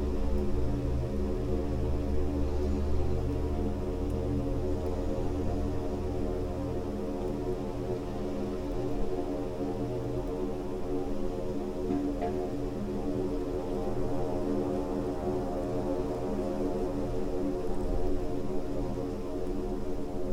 air, wind, sand and tiny stones, broken reflector, leaves, flies, birds, breath and ... voices of a borehole